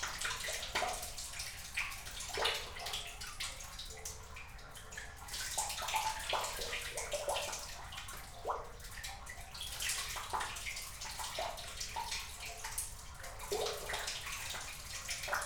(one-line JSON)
{"title": "Kupiškis, Lithuania, in the well", "date": "2015-02-28 14:40:00", "latitude": "55.85", "longitude": "24.97", "altitude": "75", "timezone": "Europe/Vilnius"}